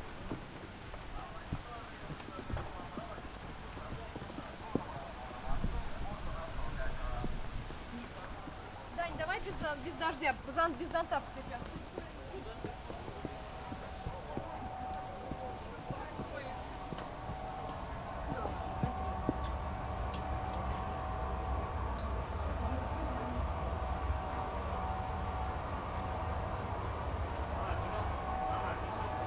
St Petersburg, Russia, Aurora - The Cruiser Aurora Museum
9 August 2011, 13:00